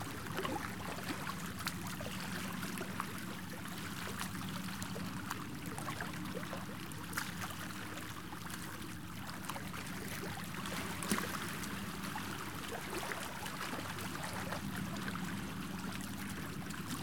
Detroit, MI, USA - Soothing Waves on Belle Isle Beach
This clip is a straight-through take of soothing waves washing ashore at Detroit's Belle Isle park. This approach is designed to present the field recording in its original, sparkling audiophile quality. I am lucky enough to currently have access to the amazing CROWN SASS stereo mic, which captures great audio motion as your subject (here its waves and light boating ambiance)moves from one channel to the other. This recording was made on the far tip of Belle Isle that points out towards Lake St. Clair, with the Crown MIC secured just 1-2 feet away from the water's edge. Wind screen and low cut were utilized to reduce wind noise. Was an ideal recording day, good warm weather with relatively little wind or airplane interference.